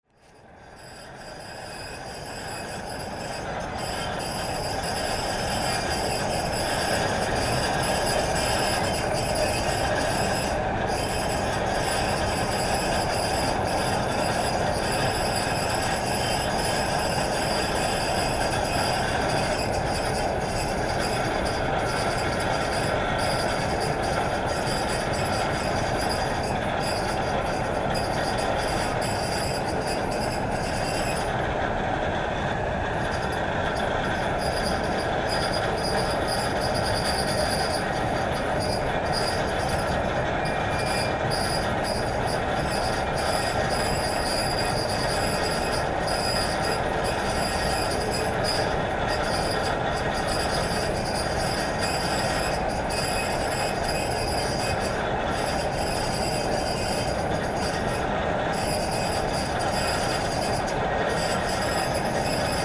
{"title": "Rumelange, Luxembourg - Merzbow conveyor", "date": "2015-05-24 21:00:00", "description": "Is this a Merzbow concert ? No no, missed ! This is an old rusty conveyor.", "latitude": "49.47", "longitude": "6.01", "altitude": "318", "timezone": "Europe/Luxembourg"}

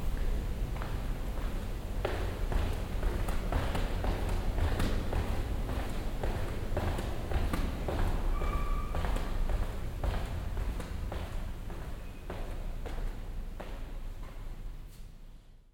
{"title": "Düsseldorf, Eiskellerstrasse, Kunstakademie", "date": "2008-08-20 09:24:00", "description": "Mittags an und in der Kunstakademie. Ein Gang durch den Eingangsbereich und das Foyer. Eine Fahrt mit Aufzug\nsoundmap nrw: social ambiences/ listen to the people - in & outdoor nearfield recordings", "latitude": "51.23", "longitude": "6.77", "altitude": "43", "timezone": "Europe/Berlin"}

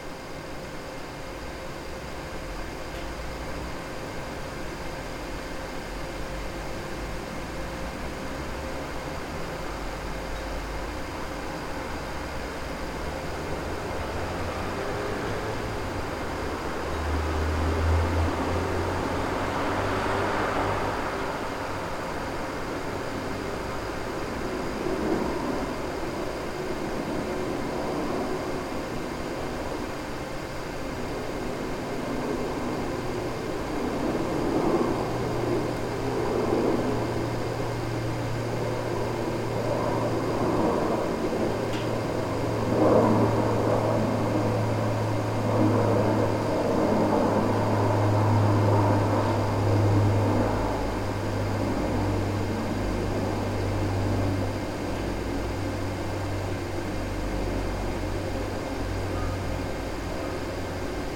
{"title": "Kauno autobusų stotis, Kaunas, Lithuania - Kaunas bus station, evening atmosphere", "date": "2021-04-22 21:43:00", "description": "A recording of an almost empty Kaunas bus station platform in a late evening. Distant traffic and a nearby LED announcement board hum combines into a steady drone. Recorded with ZOOM H5.", "latitude": "54.89", "longitude": "23.93", "altitude": "30", "timezone": "Europe/Vilnius"}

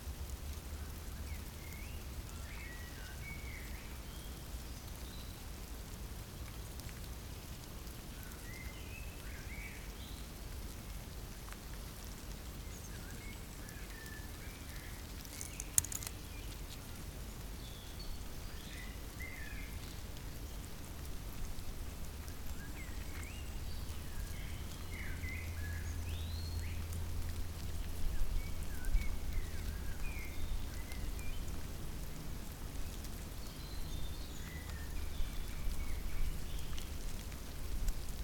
{"title": "Frickenhausen, Deutschland - Ants make a rustling noise.", "date": "2021-03-30 17:00:00", "description": "Ants. A great many of ants make them hearable.\nSony PCM-D50; Rec. Level 5; 120°", "latitude": "48.59", "longitude": "9.39", "altitude": "408", "timezone": "Europe/Berlin"}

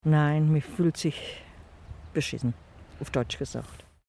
{"title": "heinersdorf - außerhalb des dorfes", "date": "2009-08-18 16:58:00", "description": "Produktion: Deutschlandradio Kultur/Norddeutscher Rundfunk 2009", "latitude": "50.37", "longitude": "11.27", "altitude": "443", "timezone": "Europe/Berlin"}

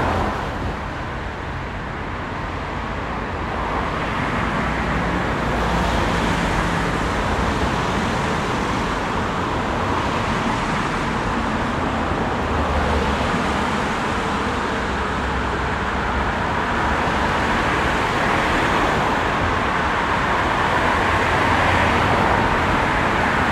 {"title": "Rotterdam, s Gravendijkwal, Rotterdam, Netherlands - s Gravendijkwal", "date": "2022-01-18 13:00:00", "description": "Recording of the s Gravendijkwal´s tunnel. Cars of different types and sizes. Recorded with zoom H8", "latitude": "51.91", "longitude": "4.46", "altitude": "4", "timezone": "Europe/Amsterdam"}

